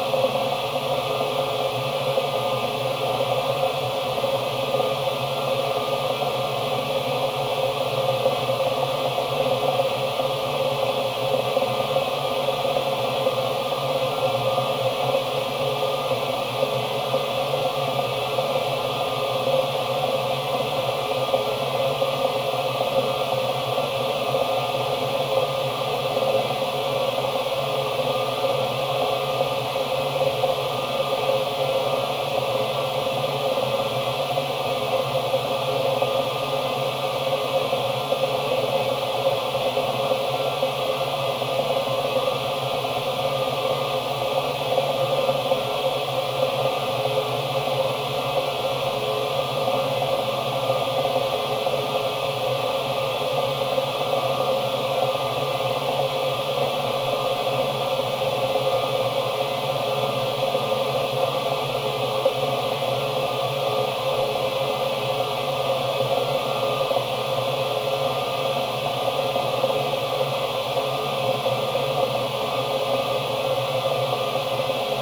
Court-St.-Étienne, Belgique - Gas treatment
This plant is a place where odour is added to the gas. Normally, the gas has no special odour ; to be detected by people in case of emergency, an odour is artificially added. The sound is a very high pressure gas pipe.
Court-St.-Étienne, Belgium